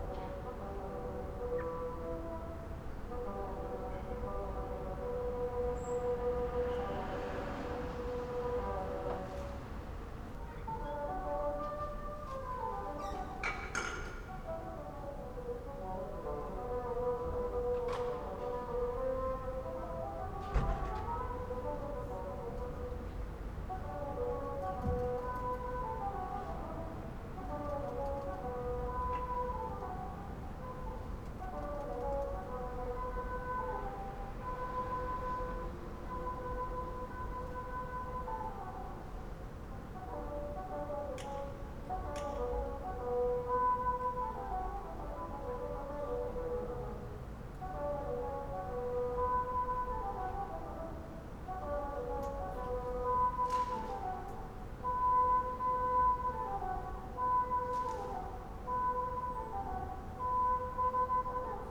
Köln, Maastrichter Str., backyard balcony - musician rehearsing in the backyard
winter afternoon, a musiscian is rehearsing in the backyard
(Sony PCM D50)
3 February 2015, ~17:00